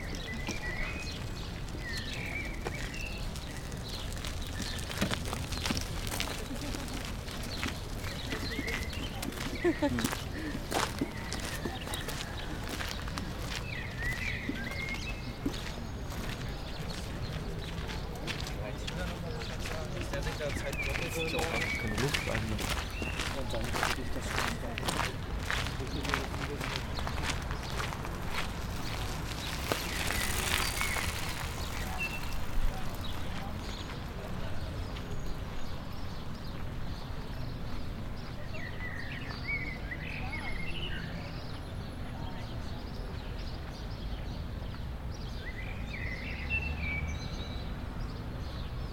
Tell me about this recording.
On the footpath by the Landwehrkanal. Recorded with Shure VP88 stereo microphone. Walkers, joggers, cyclists, birds. Distant traffic.